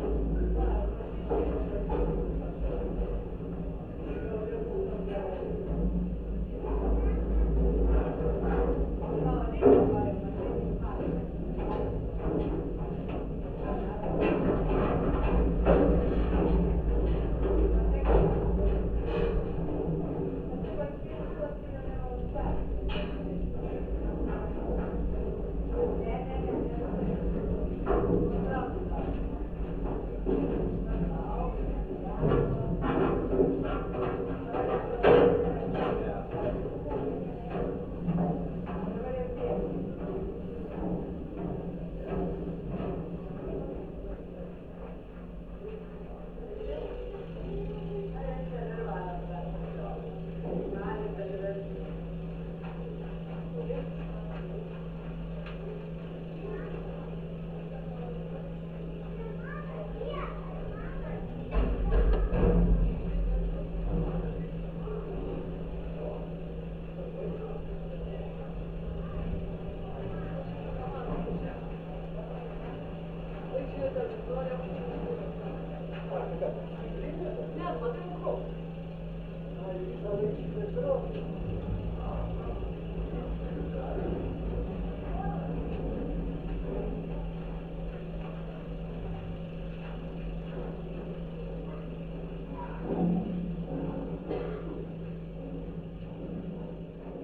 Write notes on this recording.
contact microphones placed on metallic constructions of 32 meters high observation tower. passangers' feets and wind.